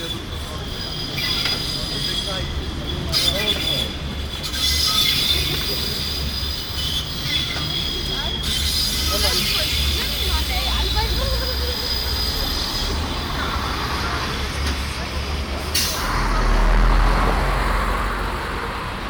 cologne, chlodwigplatz, kvb haltestelle, bahn
soundmap cologne/ nrw
chlodwigplatz nachmittags, einfahrt einer strassenbahn an die haltestelle
project: social ambiences/ listen to the people - in & outdoor nearfield recordings